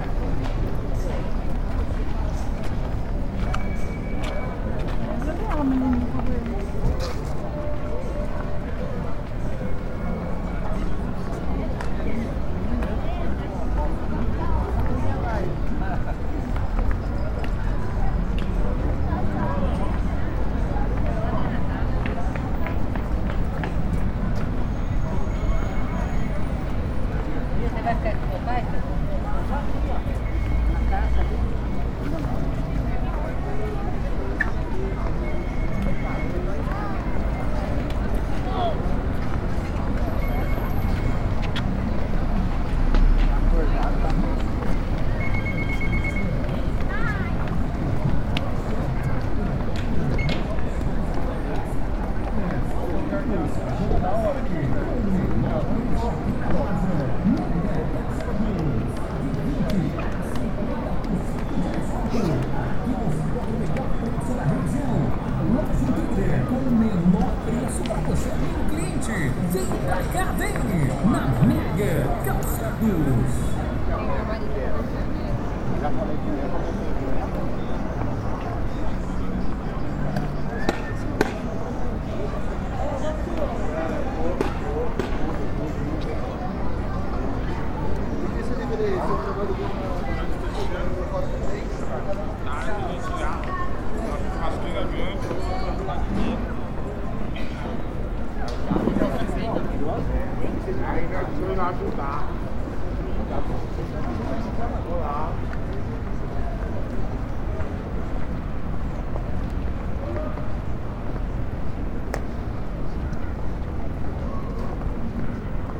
Caminhada - Centro, Londrina - PR, Brasil - Calçadão: caminhada sonora 23/05/16
Caminhada sonora realizada e gravada no Calçadão de Londrina, Paraná.
Categoria de som predominante: antropofonia (vozes, veículos, anúncios, músicos de rua, vendedores ambulantes...).
Condições do tempo: ensolarado, vento, frio.
Hora de início: 14h17.
Equipamento: Tascam DR-05.
Soundtrack performed and recorded on the Boardwalk in Londrina, Paraná.
Predominant sound category: antropophony (voices, vehicles, advertisements, street musicians, street vendors ...).
Weather conditions: sunny, wind, cold.
Start time: 2:17 p.m.
Londrina - PR, Brazil, 23 May 2016, 14:17